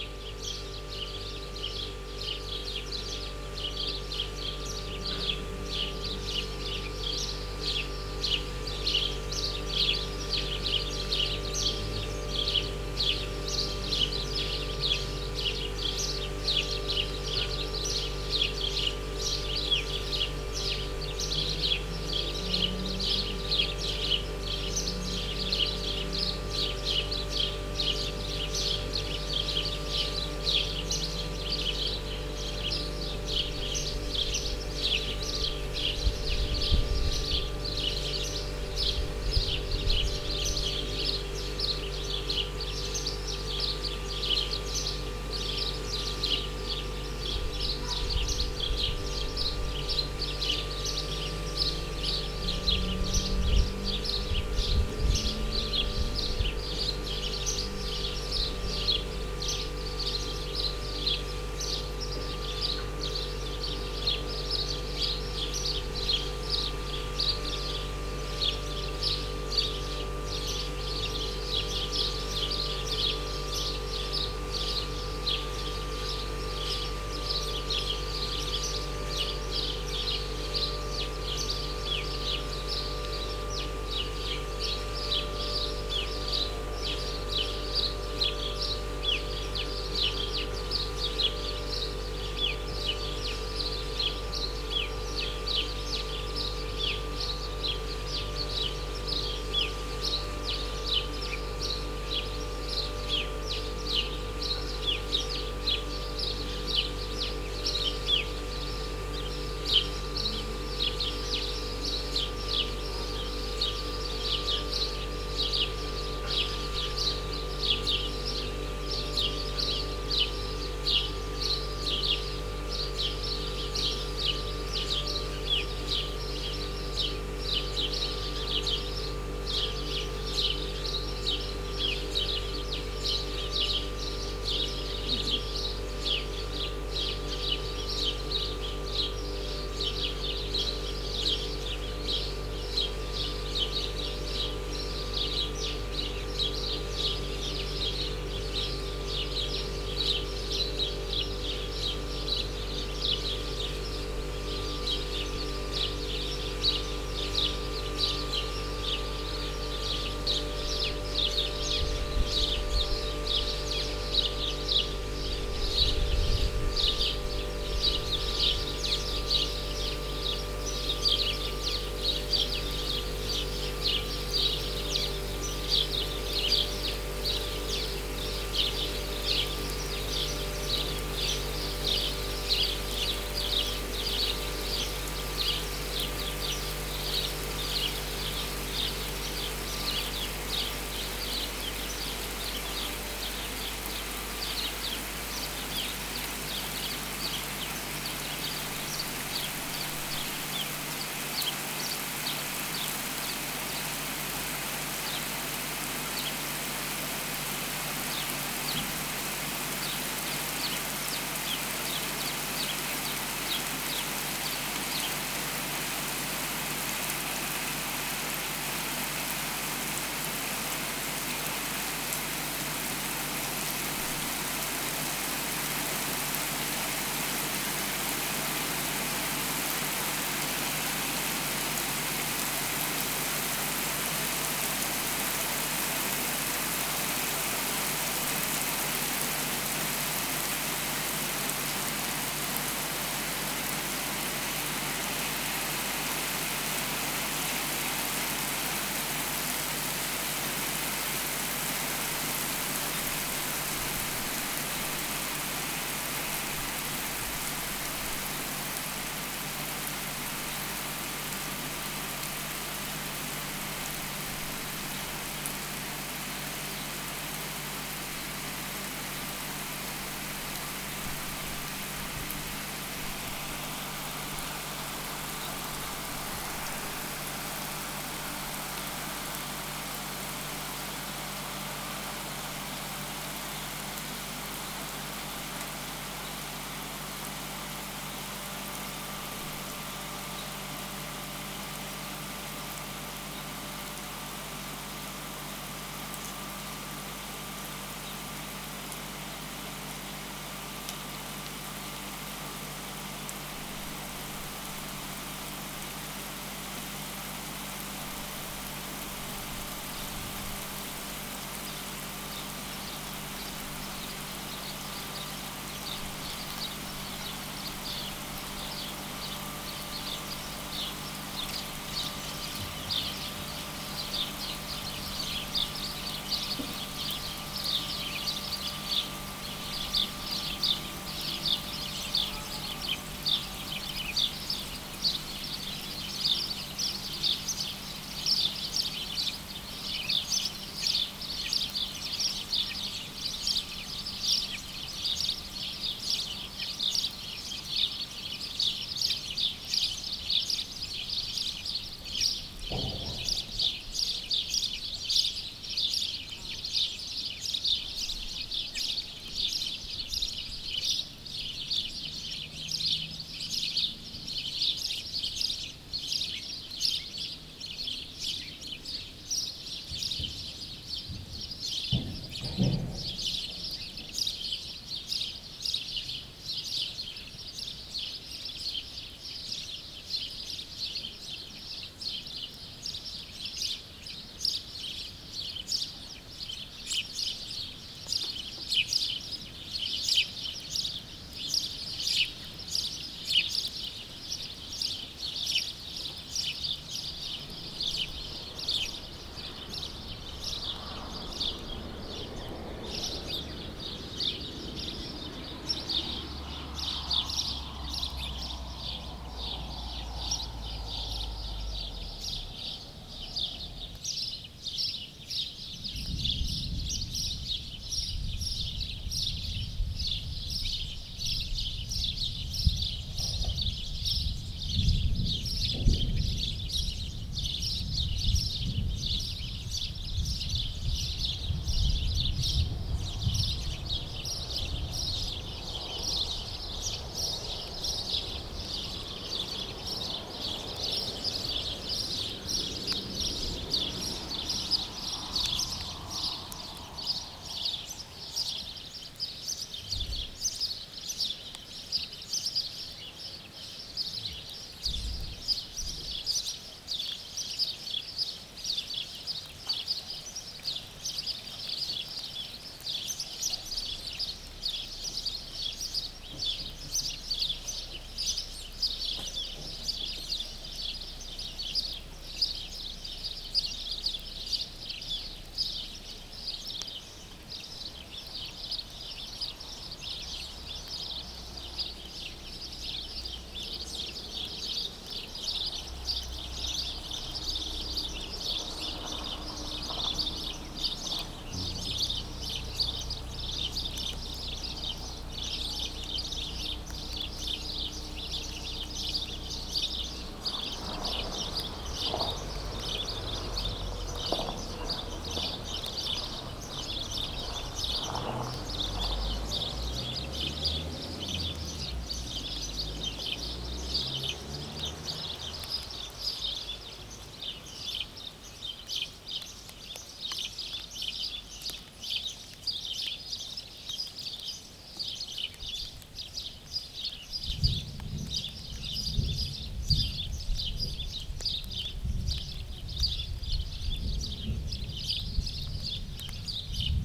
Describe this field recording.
On the center of the small village called Duesme, this is the rural ambiance of a Burgundy small village. In first, the milking parlor with cows, rain is coming (a little storm), sparrows stop singing a little time, but not long !